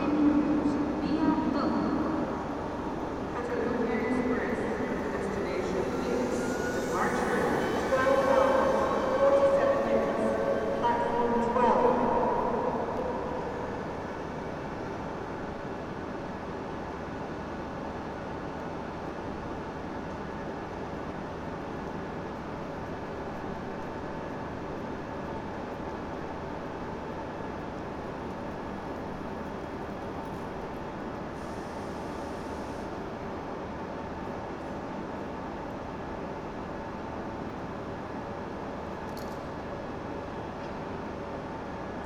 Estació de França
Mythical ferroviary Station. Long reverbs and echoing
Barcelona, Spain, January 2011